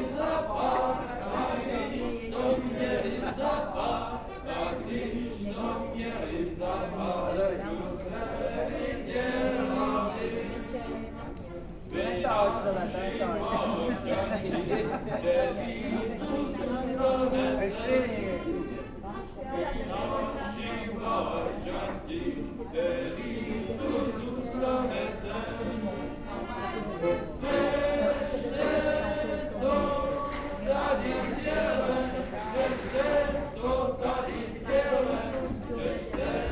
Kájovská, Vnitřní Město, Český Krumlov, Czechia - Locals sing in a pub in Český Krumlov
Recording of a song played on accordion and sung by locals in a pub. They were inside of pub with open doors and windows, recorded from the street.